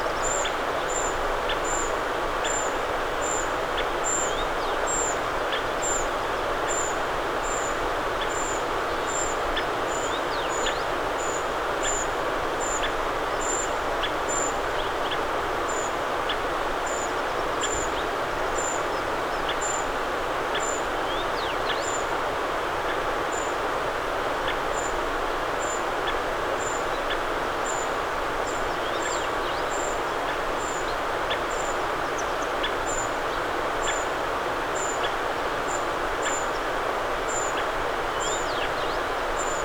{"title": "Ермаковский, Красноярский край, Россия - Us valley.", "date": "2012-07-29 06:35:00", "description": "River, morning birds, generator.\nTech.: Ediriol CS-50, Marantz PMD-661.", "latitude": "52.31", "longitude": "93.15", "altitude": "690", "timezone": "Asia/Krasnoyarsk"}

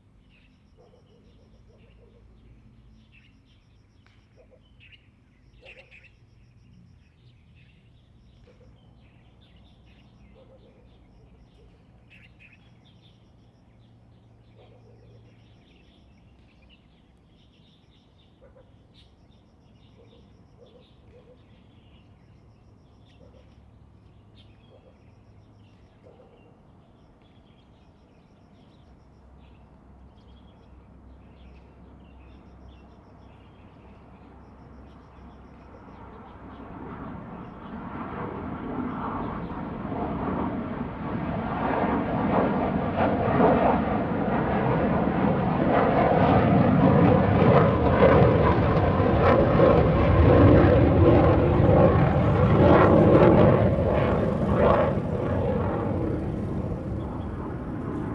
{"title": "延平路一段546巷7弄, Hsinchu City - sound of birds and Fighters", "date": "2017-09-15 14:49:00", "description": "sound of birds, Fighters take off, Dog sounds, Zoom H2n MS+XY", "latitude": "24.81", "longitude": "120.94", "altitude": "14", "timezone": "Asia/Taipei"}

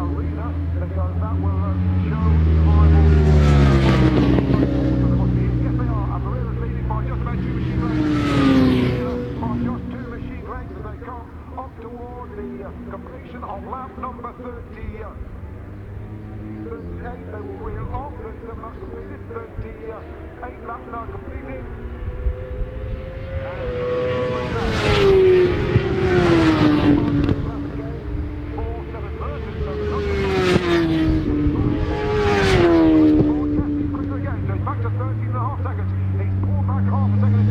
{"title": "Silverstone Circuit, Towcester, United Kingdom - world endurance championship 2002 ... race ...", "date": "2002-05-19 14:00:00", "description": "fim world endurance championship ... the silverstone 200 ... one point stereo mic to minidisk ... some commentary ... bit of a shambles ... poorly attended ... organisation was not good ... the stands opposite the racing garages were shut ... so the excitement of the le mans start ... the run across the track to start the bikes ... the pit action as the bikes came in ... all lost ... a first ... and the last ...", "latitude": "52.07", "longitude": "-1.02", "altitude": "152", "timezone": "Europe/London"}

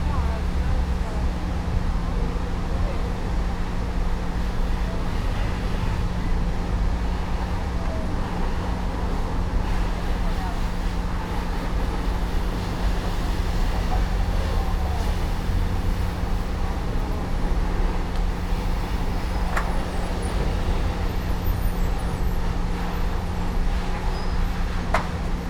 {"title": "Blvd. Juan Alonso de Torres Pte., Valle del Campestre, León, Gto., Mexico - Autolavado acqua car wash 24/7.", "date": "2022-06-03 14:52:00", "description": "Acqua car wash 24/7.\nI made this recording on june 3rd, 2022, at 2:52 p.m.\nI used a Tascam DR-05X with its built-in microphones and a Tascam WS-11 windshield.\nOriginal Recording:\nType: Stereo\nEsta grabación la hice el 3 de junio de 2022 a las 14:52 horas.", "latitude": "21.16", "longitude": "-101.69", "altitude": "1823", "timezone": "America/Mexico_City"}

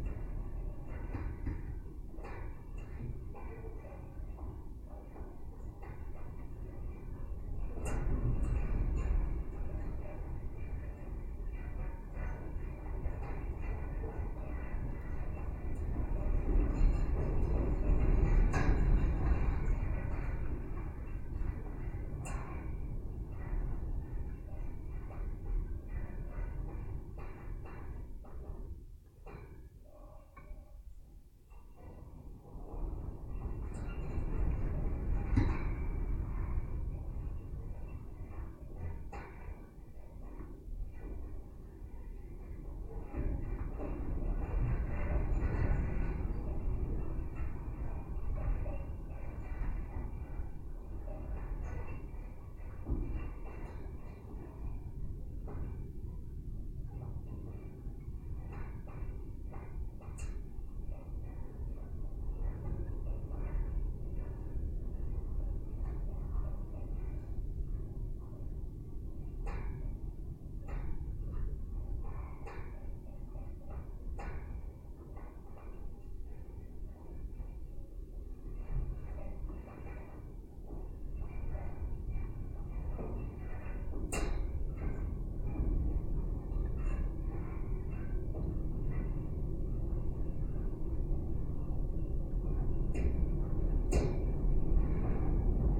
{"title": "Lone Wolf Trail, Ballwin, Missouri, USA - Castlewood Ruin Fence", "date": "2022-01-28 15:38:00", "description": "Dual contact microphone recording from a chain link fence surrounding a concrete ruin in Castlewood State Park off Lone Wolf Trail. In the 1920s this area along the Meramec River was an extremely popular summer resort destination. The park contains many concrete ruins from that time. The Lone Wolf Trail was named for the former Lone Wolf Club, an area speakeasy during Prohibition.", "latitude": "38.55", "longitude": "-90.55", "altitude": "171", "timezone": "America/Chicago"}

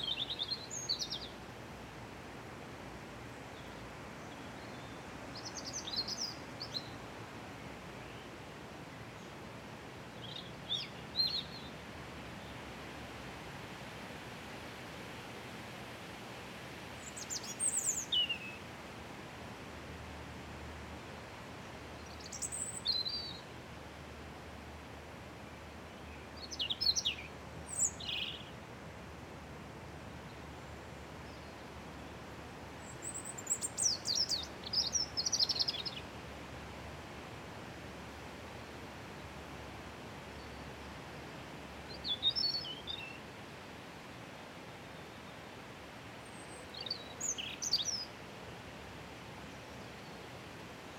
{"title": "Atlantic Pond, Ballintemple, Cork, Ireland - Wind in Trees, Robin Singing", "date": "2020-05-04 20:55:00", "description": "A windy evening with the sky turning pink and a bright moon. I sat down on the bench and noticed the Robin singing behind me, so I balanced my recorder on my bicycle seat facing away from the pond.\nRecorded with a Roland R-07.", "latitude": "51.90", "longitude": "-8.43", "altitude": "4", "timezone": "Europe/Dublin"}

